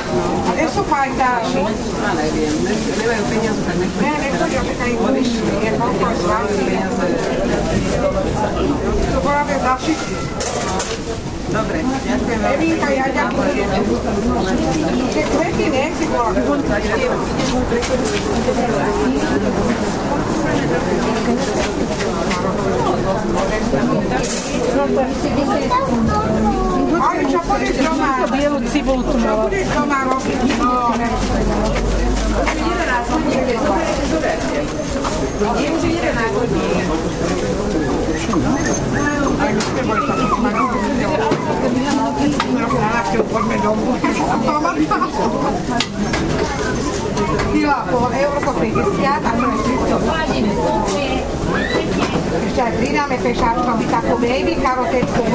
bratislava, market at zilinska street - market atmosphere VI